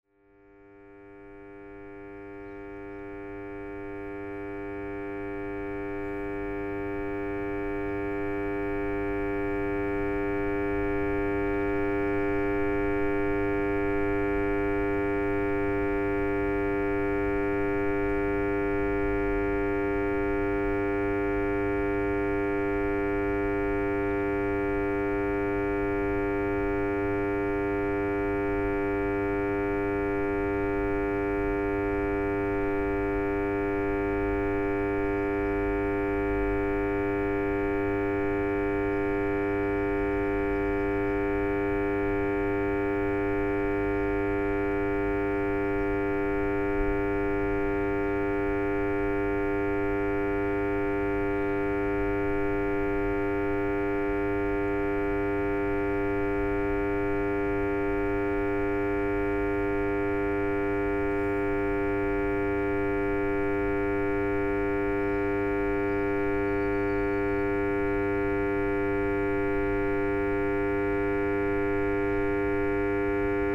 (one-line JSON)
{"title": "Maintenon, France - Power station", "date": "2016-12-29 16:15:00", "description": "A power station makes an horrible noise. Microphones are put inside the holes of the door.", "latitude": "48.59", "longitude": "1.57", "altitude": "112", "timezone": "GMT+1"}